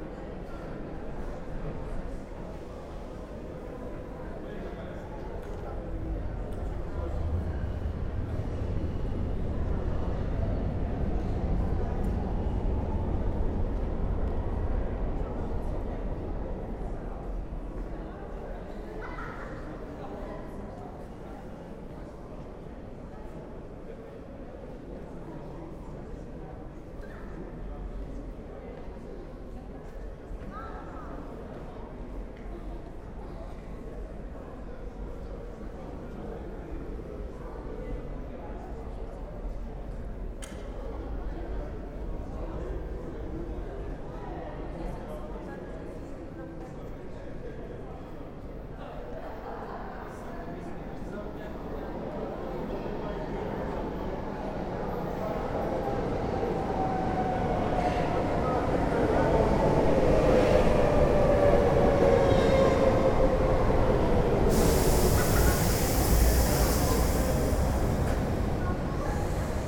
Waiting in the station for the Metro train to arrive, and then the train arriving. You can hear the nice sounds of people in the space, and the acoustics of the station, and the sounds of trains arriving on more distant platforms. The recording was made with on-board EDIROL R09 microphones.